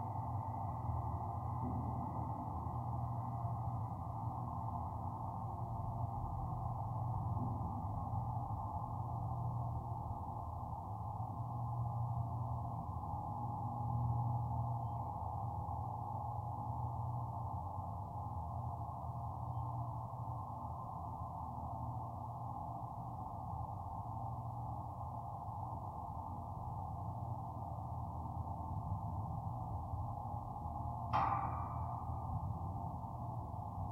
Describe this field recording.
Recording from contact mics attached to the chain link fence on the bridge over Klondike Park Lake. The center of the bridge floats on the lake and its ends are suspended from the shore. The drone from the Labadie Energy Center power plant, 1.3 miles away from the park, is a constant presence. Sound of a plane starts at 1:20.